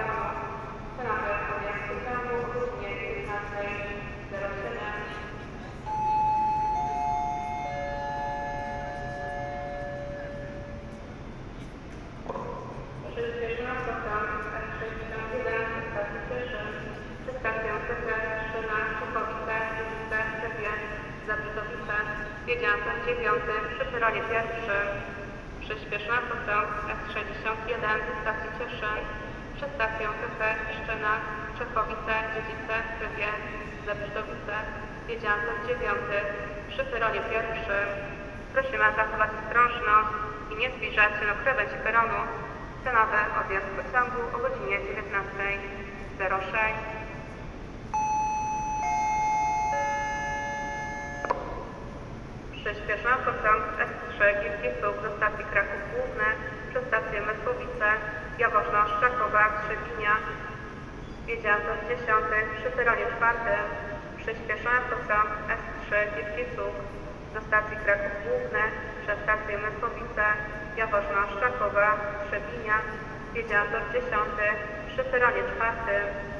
Recording from a train platform no. 4, close to the announcements speaker.
Recorded with DPA 4560 on Tascam DR-100 mk3.
województwo śląskie, Polska, 18 December